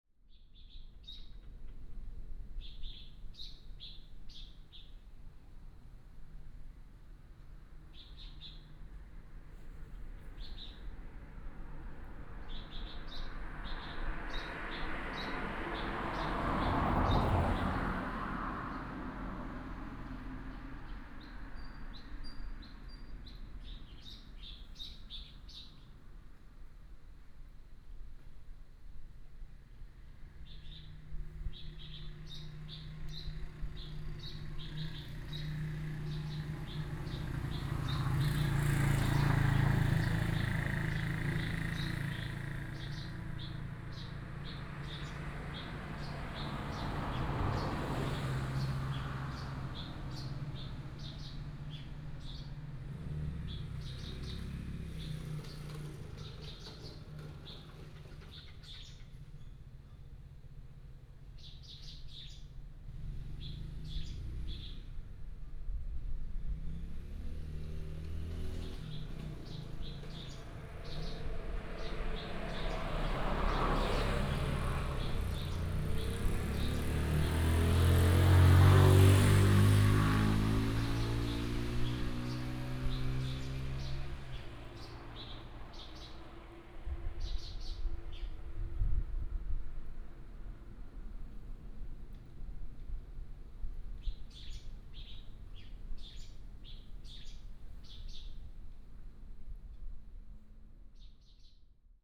旭海路62號, Pingtung County - Bird and Traffic sound
In front of the small temple, birds sound, Traffic sound
Pingtung County, Taiwan, 2 April, 12:16